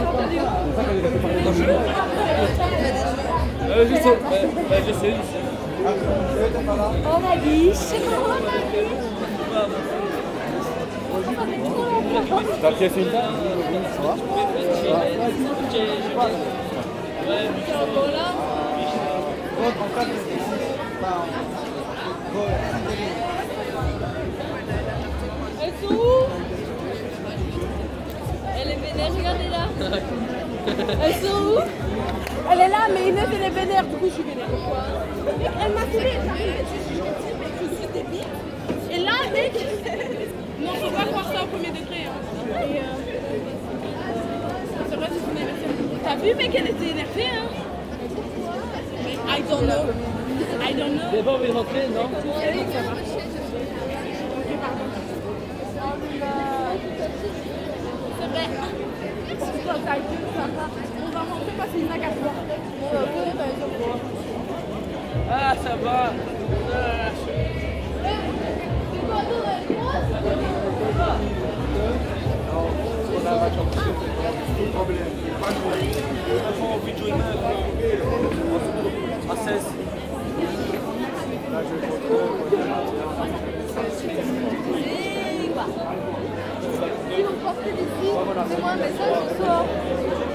Namur, Belgique - Crowded bars
On the Vegetable-Market Square and gradually walking on the Chanoine-Descamps square. The bars are crowded. They welcome a student population who is already drunk. Many tables are overloaded with the meter, a term we use to describe a meter of beer glasses in a rack. Many students practice the "affond", it's a student tradition which consists of drinking a complete beer as quickly as possible. Then, the glass bottle is shattered on the ground or the plastic crushed with the foot. The atmosphere is crazy and festive, it screams everywhere.